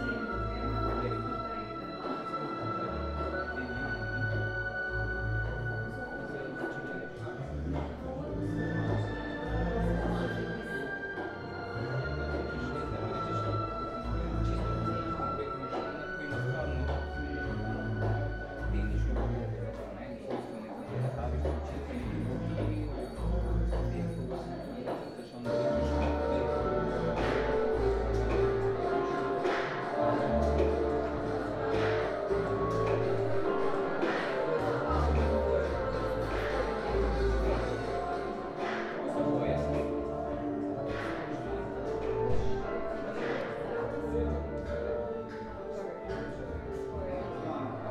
Rijeka, Croatia, Night Of Museums - Night Of Museums 2017 - Drustvo Arhitekata Rka feat. Sekcija Mladih
Night Of Museums 2017 Rijeka
27 January 2017, 19:22